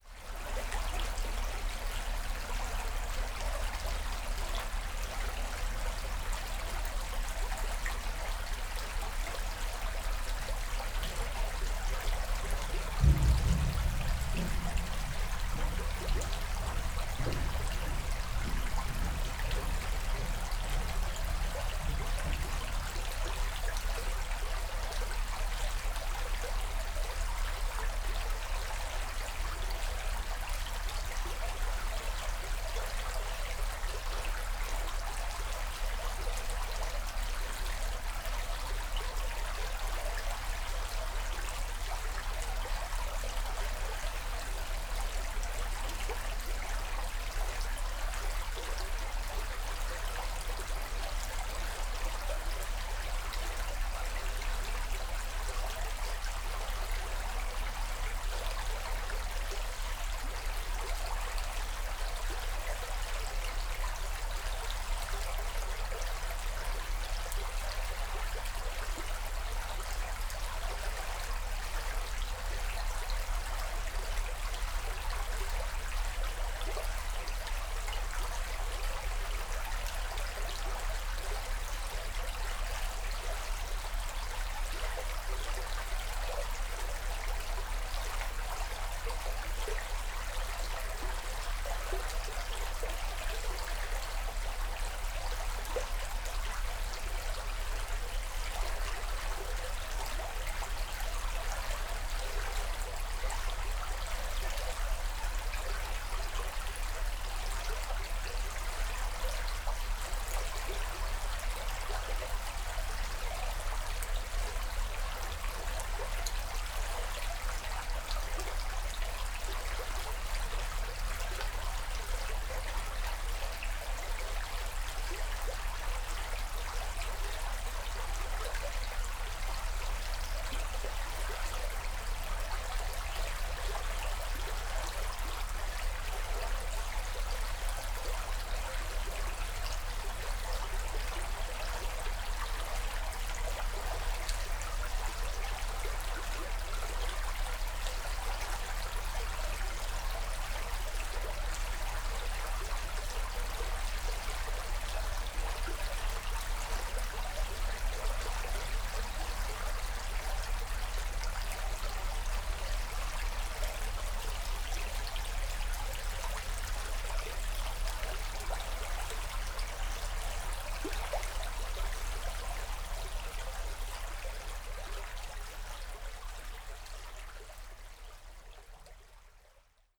Wiltbergstraße, Berlin Buch - Panke river flow at bridge
Berlin Buch, flow of river Panke at/under bridge
(Sony PCM D50, DPA4060)
Berlin, Germany, 2 February